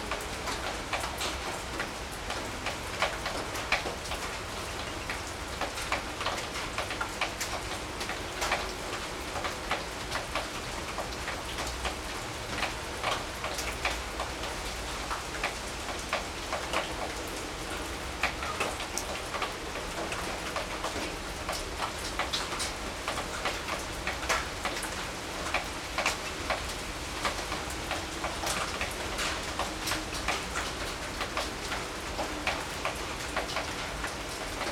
Recorded with a pair of DPA4060s and a Marantz PMD661